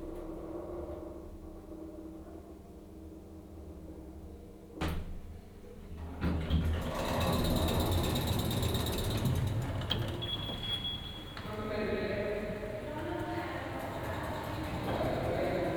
Berlin, Plänterwald station - station walk
walk in s-bahn station Plänterwald, vietnamese flower sellers talking, escalator, elevator, hall ambience. this station seems to be out of service, very few people around
Berlin, Deutschland, 9 October 2011